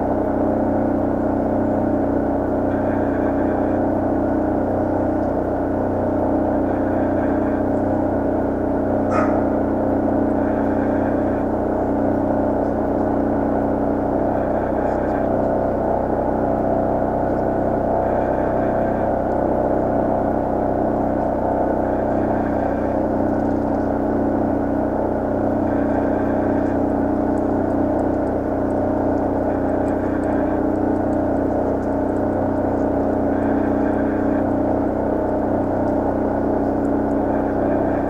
Tallinn, Baltijaam market wall ventilation - Tallinn, Baltijaam market wall ventilation (recorded w/ kessu karu)
hidden sounds, contact mic recording of a metal wall along the market at Tallinns main train station.